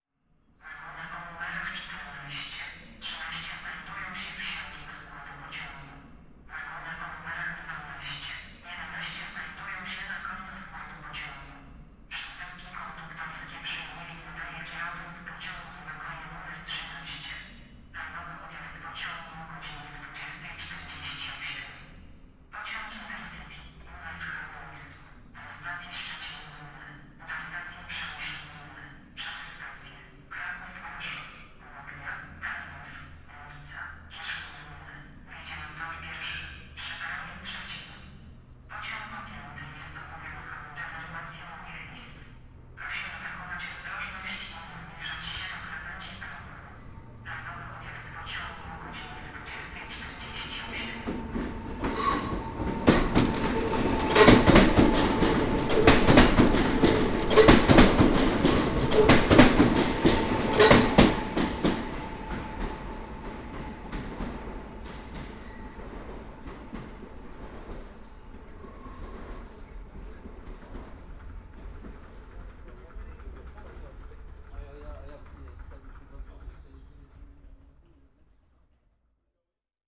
Railway station, Kraków, Poland - (122 BI) Distored announcements
Binaural recording of a distorted announcement and a passing train made from a platform perspective.
Recorded with Soundman OKM on Sony PCM D100